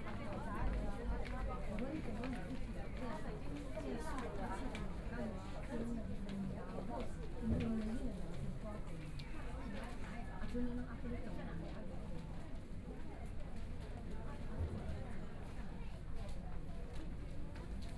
Mackay Memorial Hospital, Taipei City - Collar counter drugs
In the hospital, Collar counter drugs, Binaural recordings, Zoom H4n+ Soundman OKM II
Taipei City, Zhongshan District, 馬偕醫院, 20 January 2014, 17:09